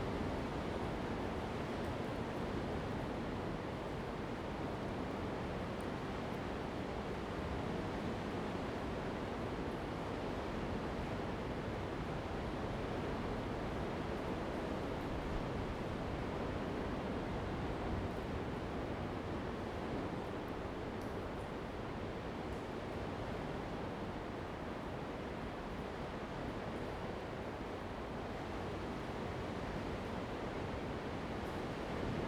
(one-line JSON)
{"title": "Jizazalay, Ponso no Tao - In the cave", "date": "2014-10-29 10:05:00", "description": "In the cave, Sound of the waves, Aboriginal gathering place\nZoom H2n MS+XY", "latitude": "22.08", "longitude": "121.51", "altitude": "78", "timezone": "Asia/Taipei"}